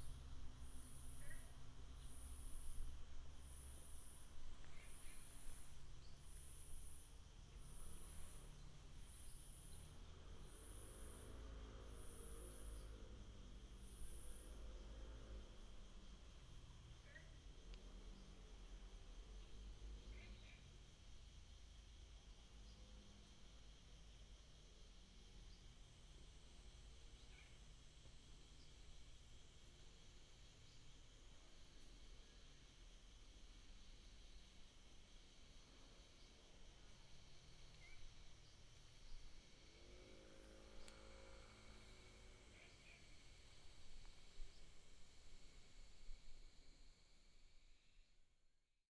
北113東眼產業道路, Sanxia Dist., New Taipei City - birds
traffic sound, birds sound, frog
Sanxia District, New Taipei City, Taiwan